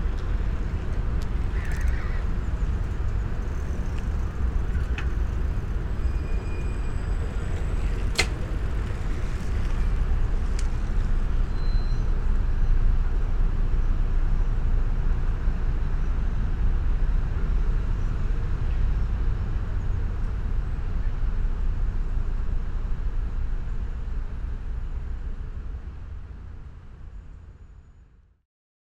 Kaliningrad, Russia, the lake
soundscape at the lake